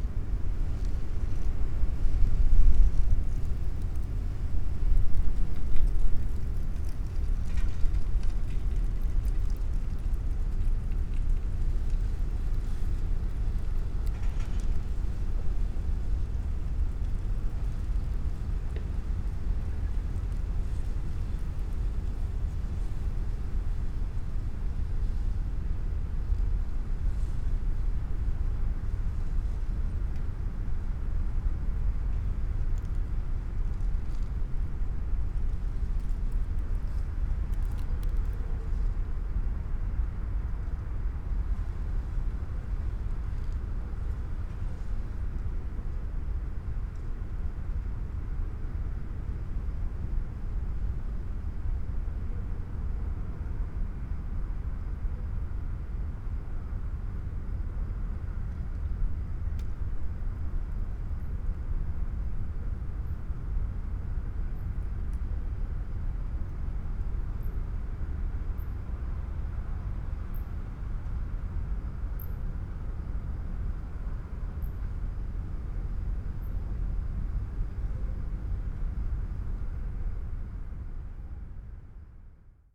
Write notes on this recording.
dry leaf, slowly sliding with night winds ...